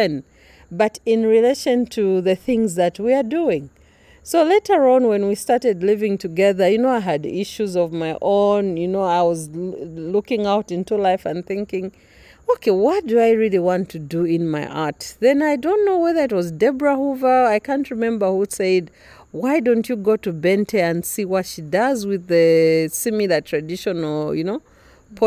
The conversation took place outside Agness’ home, the Y-shaped house of Wayi Wayi Studio & Gallery (in fact, inside, after the first track since it started raining). Agness was busy preparing for the Arts and Crafts Fair in Lusaka. A thousand things were to be done; but she still made time in the evening to take me – and future listeners - on a journey of the Mbusa, the artifacts, the rituals, the ceremony, the women’s teachings for life.
A Visual Artist, designer and art teacher from Lusaka, Agness founded and runs Wayi Wayi Art Studio & Gallery with her husband, the painter Laurence Yombwe, in Livingstone.